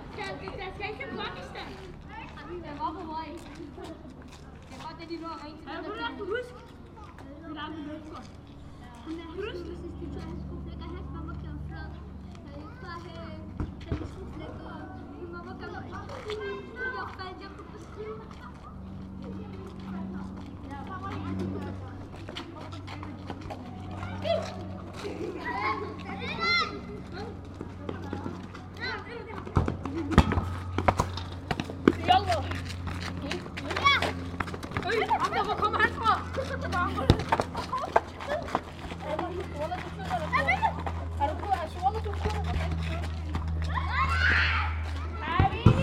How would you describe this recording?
Into a small street corner, some children playing football. They are happy and make a lot of noise !